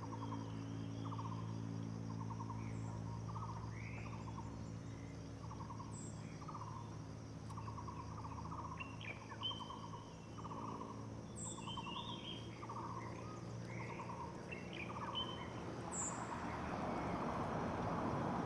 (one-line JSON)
{"title": "水上巷, 埔里鎮桃米里, Nantou County - Bird and Traffic Sound", "date": "2016-04-21 07:38:00", "description": "Faced with bamboo valley below, Bird sounds, Traffic Sound\nZoom H2n MS+XY", "latitude": "23.94", "longitude": "120.92", "altitude": "538", "timezone": "Asia/Taipei"}